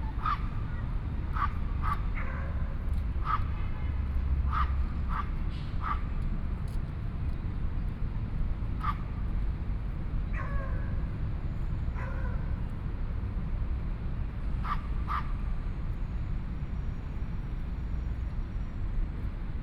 林森公園, Taipei City - Night park
Pedestrian, Traffic Sound, Dogs barking, Traffic Sound, Environmental sounds
Please turn up the volume a little
Binaural recordings, Sony PCM D100 + Soundman OKM II